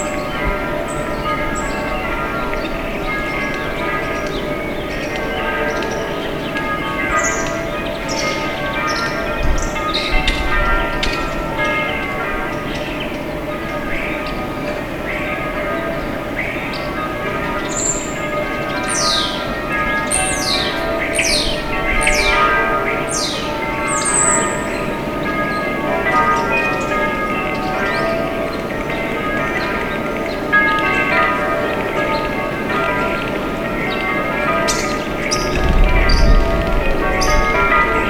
Alghero Sassari, Italy - Early Morning, Room 207
Recorded out the window of room 207 at the Hotel San Francesco.
8 August 2005